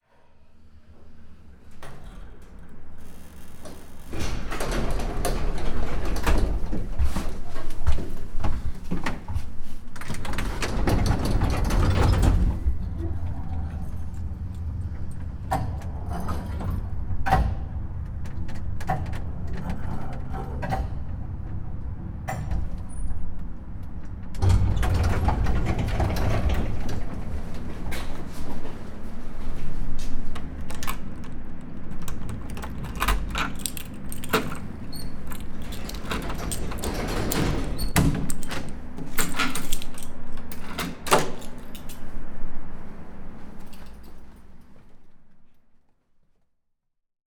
{
  "title": "Elevator from Hell, Kiev, Ukraine",
  "date": "2009-09-13 14:40:00",
  "description": "This was the elevator to get to our 4th floor apartment. The stairwell and elevator shaft would have been right at home in a horror movie.\nSchoeps CCM4Lg & CCM8Lg M/S in modified Rode blimp directly into a Sound Devices 702 recorder.\nEdited in Wave Editor on Mac OSx 10.5",
  "latitude": "50.43",
  "longitude": "30.53",
  "altitude": "178",
  "timezone": "Europe/Kiev"
}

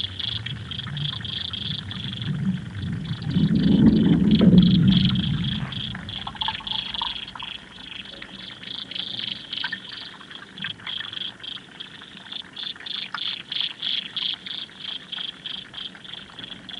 {
  "title": "Maryhill Locks, Glasgow, UK - The Forth & Clyde Canal 004: Corixidae (water boatmen)",
  "date": "2020-07-02 18:27:00",
  "description": "Recorded with a pair of Aquarian Audio H2a hydrophones – socially distanced at 2m in stereo. Left & right channel hydrophones at varying depths under the canal jetty. Recorded with a Sound Devices MixPre-3",
  "latitude": "55.89",
  "longitude": "-4.30",
  "altitude": "36",
  "timezone": "Europe/London"
}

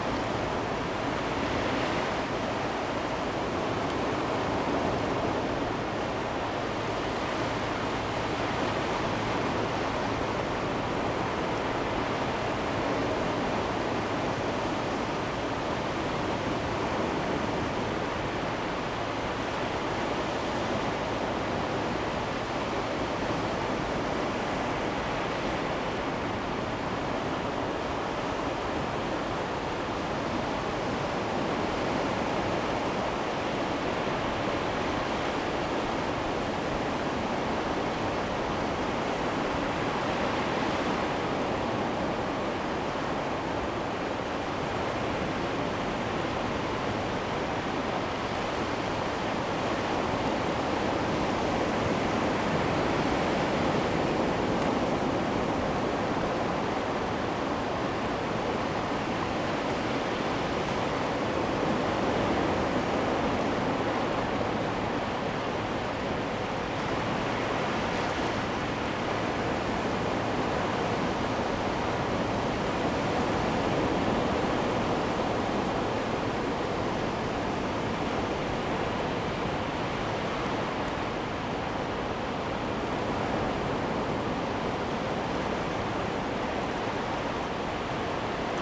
{
  "title": "Xinfeng Township, Hsinchu County - High tide time",
  "date": "2017-08-26 13:29:00",
  "description": "at the seaside, Waves, High tide time, Zoom H2n MS+XY",
  "latitude": "24.93",
  "longitude": "120.98",
  "altitude": "4",
  "timezone": "Asia/Taipei"
}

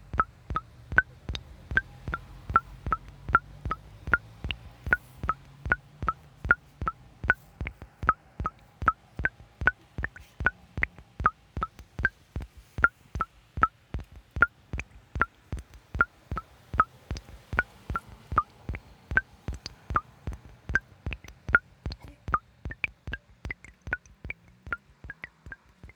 {
  "title": "Wordsworth drinking fountain, Dove Cottage, Grasmere - Dripping Font",
  "date": "2019-10-16 11:38:00",
  "description": "Recorded on a sound walk I led with 30 participants wearing wireless headphones. Part of an inspiration day for a new composition made by young composers and Manchester Camerata. We took this rhythmical recording back to Dove Cottage, put it into Ableton live, added some pitch effects and used it as a basis for an improvisation with the young musicians. It was the first day of work creating a new composition to celebrate the 250th anniversary of William Wordsworth's birth in April 2020.\n(SD MixPre10t + Aquarian Audio hydrophone)",
  "latitude": "54.46",
  "longitude": "-3.02",
  "altitude": "73",
  "timezone": "Europe/London"
}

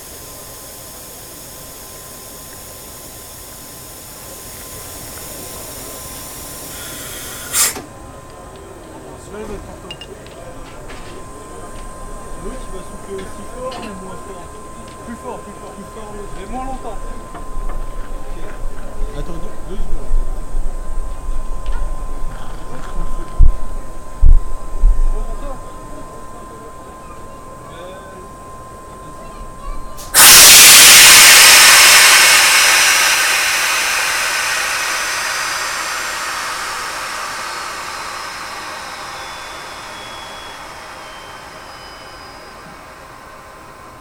{
  "title": "Paris, gare de lyon mecanique de train",
  "description": "enregistré sur cantar et couple MS schoeps",
  "latitude": "48.84",
  "longitude": "2.38",
  "altitude": "38",
  "timezone": "Europe/Berlin"
}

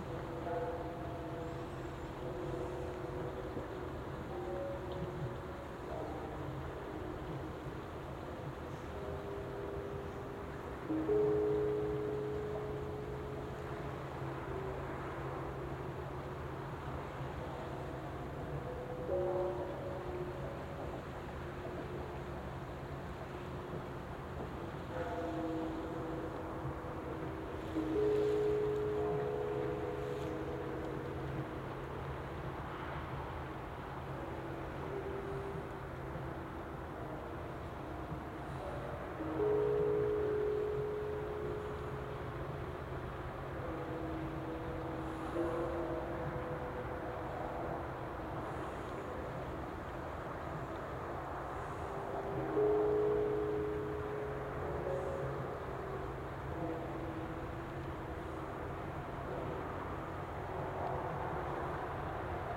{
  "title": "New Years Eve in Tsuji, Rittō-shi, Shiga-ken, Japan - New Year 2018 Temple Bells and Fireworks",
  "date": "2018-01-01",
  "description": "New Year's eve recording in a field in Japan. We can hear temple bells, traffic, trains, and other sounds. At midnight nearby fireworks and a neighbor's firecracker announce the new year. Recorded with an Audio-Technica BP4025 stereo microphone and a Tascam DR-70D recorder mounted on a tripod.",
  "latitude": "35.04",
  "longitude": "136.02",
  "altitude": "108",
  "timezone": "Asia/Tokyo"
}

